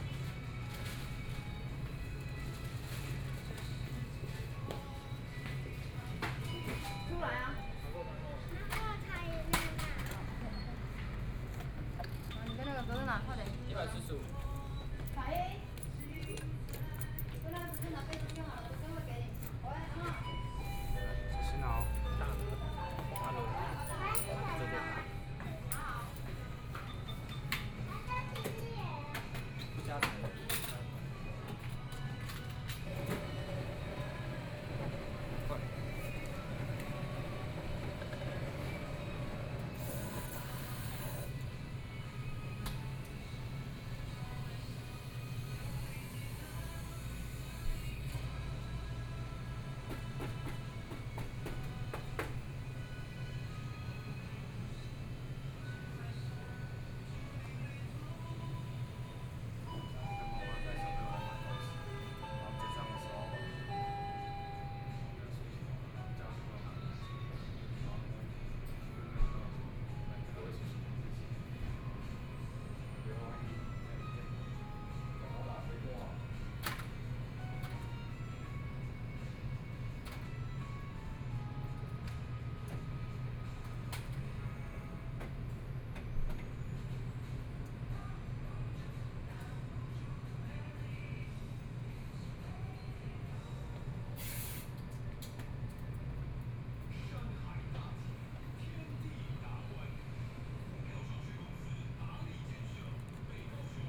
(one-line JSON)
{"title": "Dizheng Rd., Xihu Township - Walking on the street", "date": "2014-01-05 19:45:00", "description": "Walking on the street, In convenience stores, Traffic Sound, Zoom H4n+ Soundman OKM II", "latitude": "23.96", "longitude": "120.48", "altitude": "20", "timezone": "Asia/Taipei"}